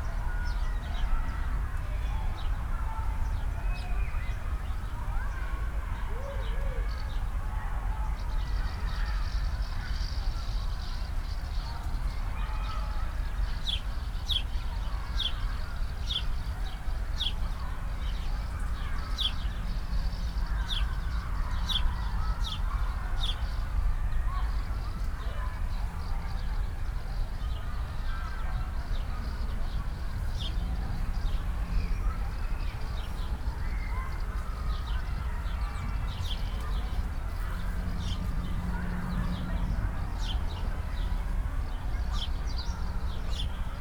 Tempelhofer Feld, Berlin, Deutschland - sanctuary, ambience
the little sanctuary with its now dense vegetation was an access point for fuel tanks before, signs still remind that smoking is not allowed with a range of 15m. ambience with sounds from the nearby swimming bath.
(Sony PCM D50, DPA4060)